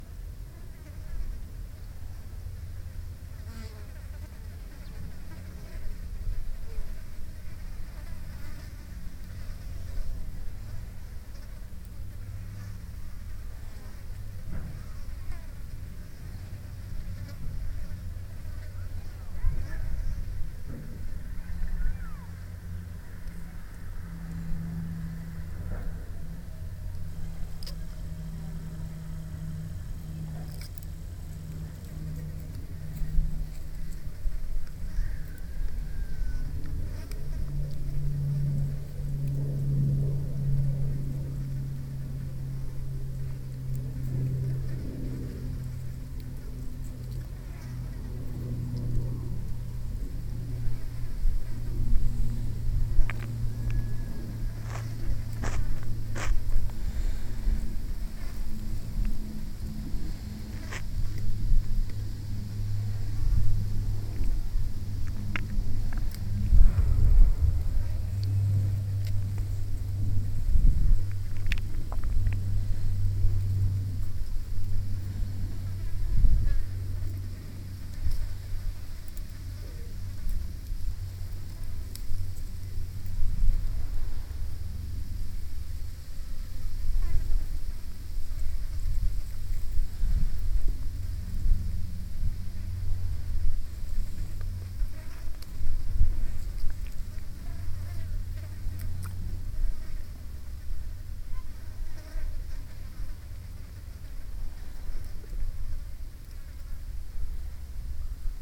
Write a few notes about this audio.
sitting with binaural mics on a hill top looking at the village, a little of moving around